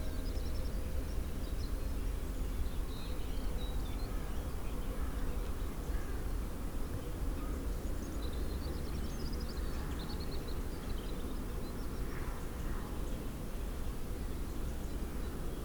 recorded during first lockdown, in the field between National 124 and the village (1km from the church was the limit authorized). Zoom H6 capsule xy